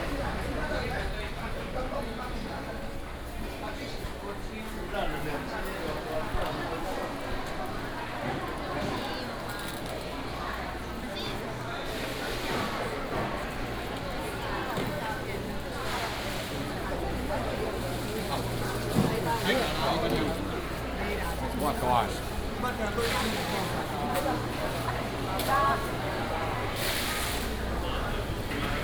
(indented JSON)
{
  "title": "竹南第二公有零售市場, Zhunan Township - Walking through the market",
  "date": "2017-01-18 08:33:00",
  "description": "Walking through the market, Traffic Sound",
  "latitude": "24.69",
  "longitude": "120.88",
  "altitude": "14",
  "timezone": "GMT+1"
}